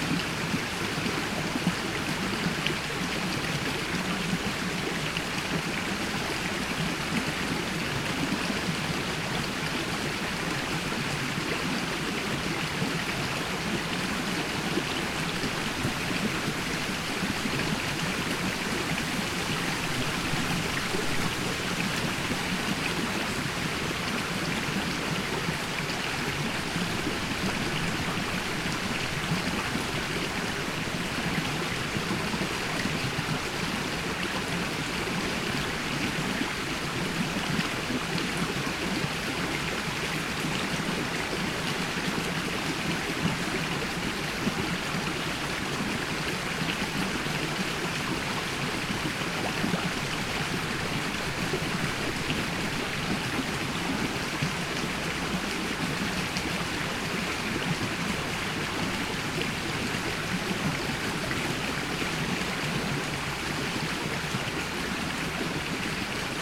Mizarai, Lithuania, little river flows into Nemunas
some little river running into the biggest lithuanian river Nemunas